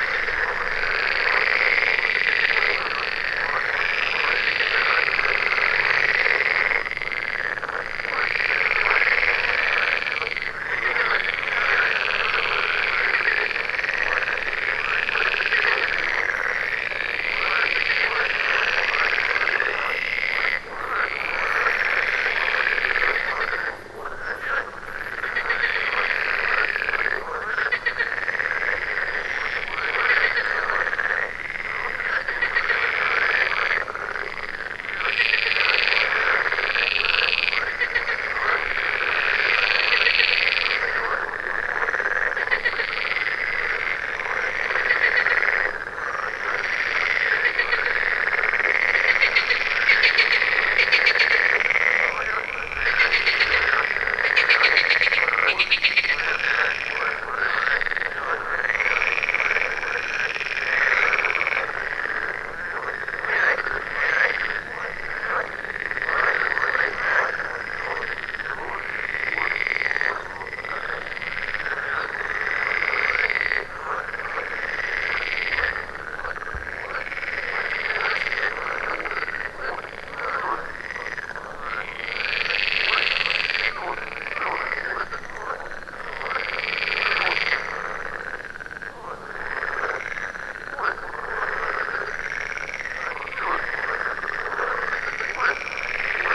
Krugteich, Kiel, Deutschland - Frog concert
Hundreds of frogs in a pond ribbit loudly at night. Zoom F4 recorder, Røde NTG2, Blimp and DeadWombat windshield.
Kiel, Germany, 18 June